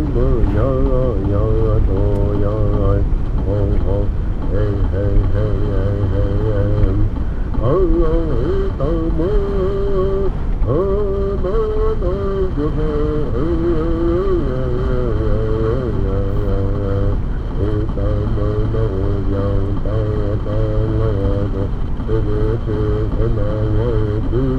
Hopi chanting on the radio, heading north on Route 160 towards Cameron
AZ, USA